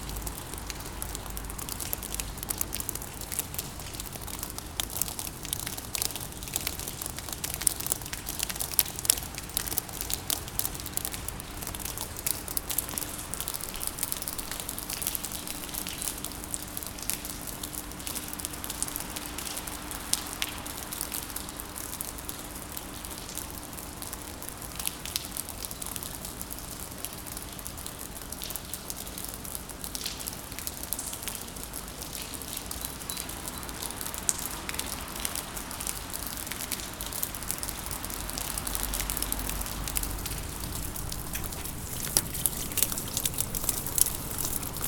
Base sous-marine de, Saint-Nazaire, France - the rain in the submarine base of Saint-Nazaire
recorded with a H4zoom
France métropolitaine, France, 21 November 2019